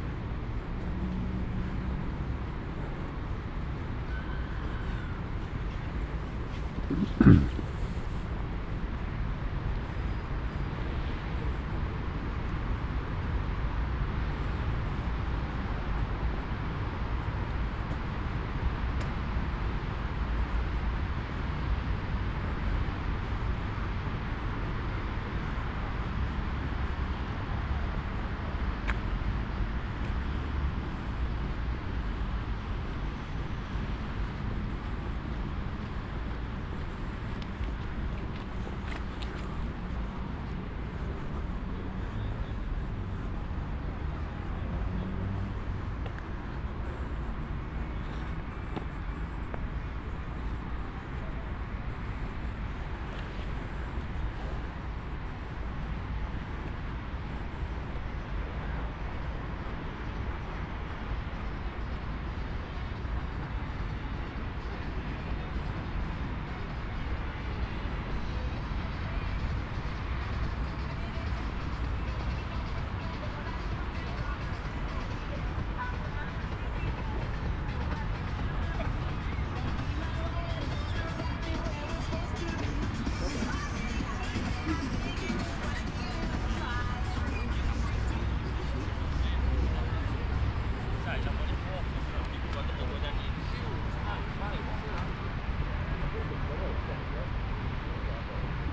Ascolto il tuo cuore, città. I listen to your heart, city, Chapter CLXXXIV - Valentino Park winter soundwalk in the time of COVID19": soundwalk, first recording of 2022.
"Valentino Park winter soundwalk in the time of COVID19": soundwalk, first recording of 2022.
Chapter CLXXXIV of Ascolto il tuo cuore, città. I listen to your heart, city
Sunday, January 9th, 2022. San Salvario district Turin, from Valentino park to home
Start at 5:26 p.m. end at 5:49 p.m. duration of recording 23’09”
The entire path is associated with a synchronized GPS track recorded in the (kmz, kml, gpx) files downloadable here: